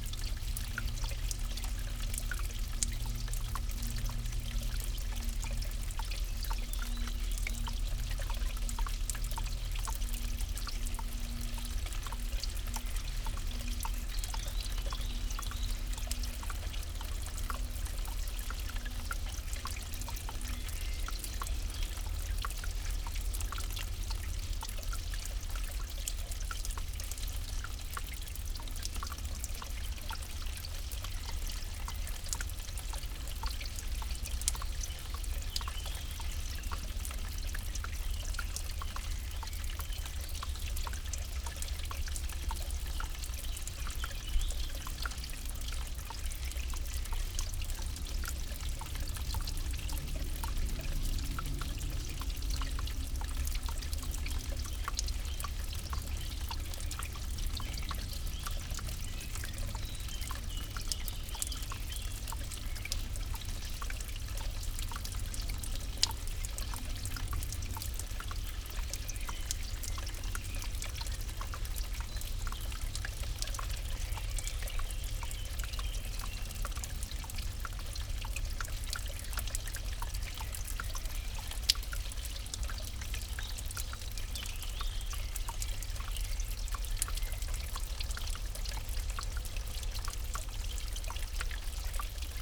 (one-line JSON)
{"title": "Hergiswald, Kirche, Kriens, Schweiz - water dripping", "date": "2022-05-17 15:19:00", "description": "In the immediate vicinity of the sanctuary, you will find a small watercourse", "latitude": "47.02", "longitude": "8.24", "altitude": "780", "timezone": "Europe/Zurich"}